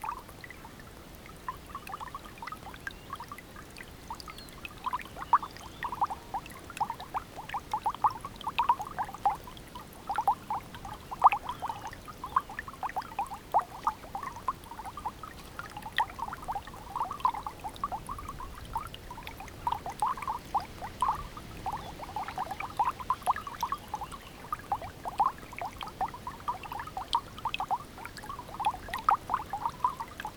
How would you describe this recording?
Hard to believe that yesterday you didn’t want to fall in while walking over the stepping stones. And today you could walk across the river (if you could call it that) without using the stepping stones and you really had to try to get your boots wet.